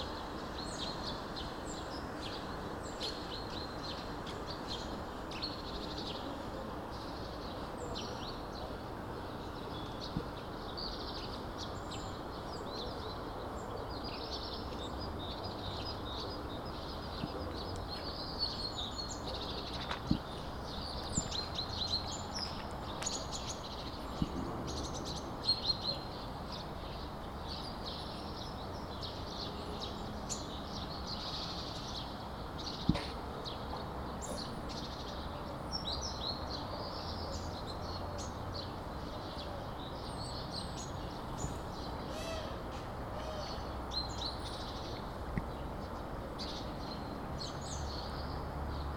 вулиця Шмідта, місто Костянтинівка, Donetsk Oblast, Украина - Птицы среди руин
Щебет птиц в поросших кустами остатках зданий
Звук:
Zoom H2n
Donetsk Oblast, Ukraine, October 2018